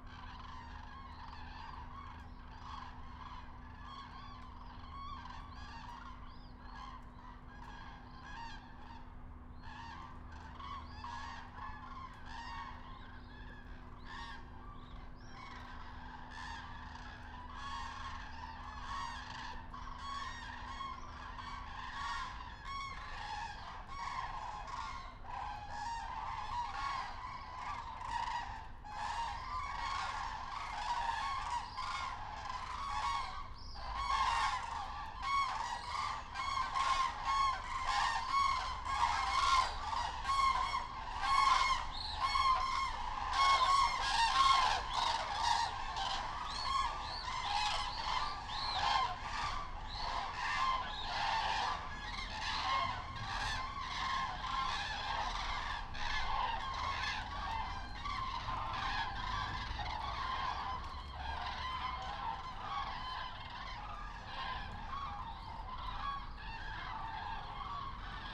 GRUES CENDRÉES EN MIGRATION NOCTURNE SAINT PIERRE DU MONT - 63 Rue Jules Ferry, 40280 Saint-Pierre-du-Mont, France - GRUES CENDRÉES DANS LA BRUME
Extraordinaire théâtre des oreilles que ces Grues Cendrées en pleine migration qui passaient ce soir là par Saint Pierre du Mont à proximité de Mont de Marsan. Le brouillard est établie, comme une brume, et seuls leurs chants sont présent et même très présent! Spectacle sublime et incroyable dans un cadre urbain!
Nouvelle-Aquitaine, France métropolitaine, France